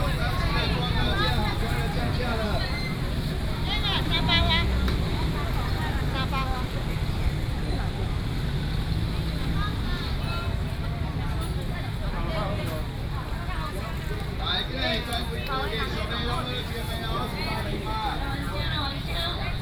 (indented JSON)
{
  "title": "Bo’ai Rd., Yuanlin City, Changhua County - Walking through the market",
  "date": "2017-01-25 09:21:00",
  "description": "A variety of market selling voice, Traffic sound, Walking through the market",
  "latitude": "23.96",
  "longitude": "120.57",
  "altitude": "31",
  "timezone": "Asia/Taipei"
}